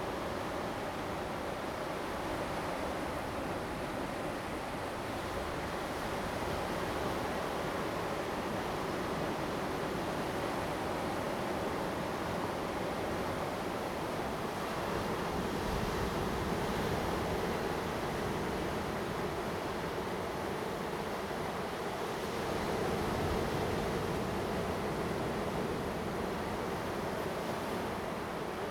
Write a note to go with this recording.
Waves, On the coast, Zoom H2n MS +XY